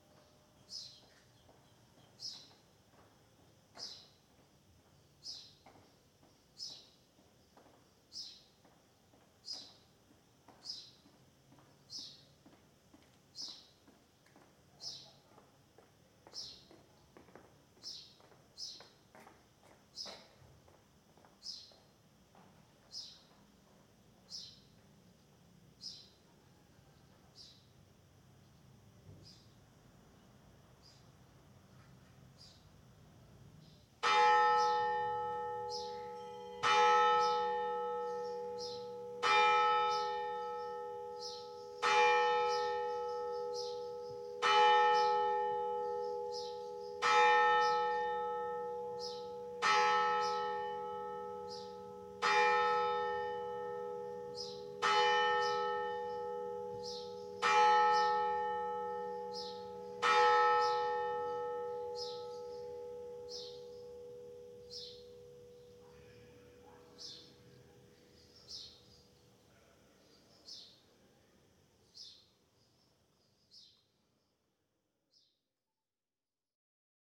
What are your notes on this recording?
Bolulla - Province d'Alicante - Espagne, Messe de 11h, Pas de volée mais tintements simultanées, ZOOM F3 - AKG 451B